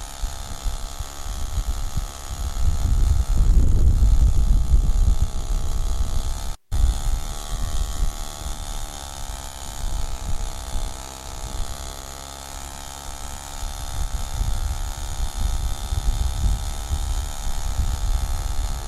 Greece: Naxos: Scooter ride up the hill/ Mit dem Roller den Berg hoch